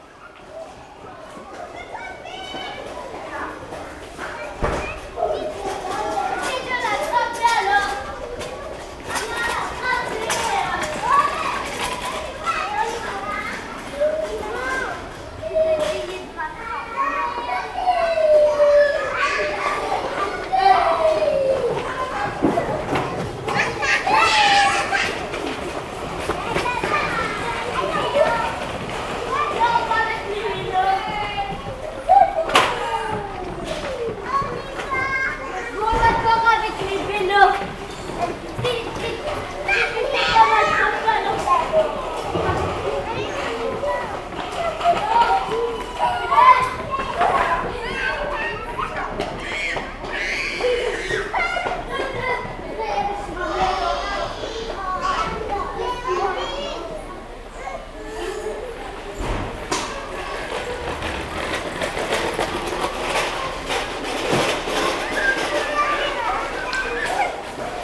Defalque school, young children are playing on the playground, waiting for their parents to come.
Court-St.-Étienne, Belgium, 18 September, ~16:00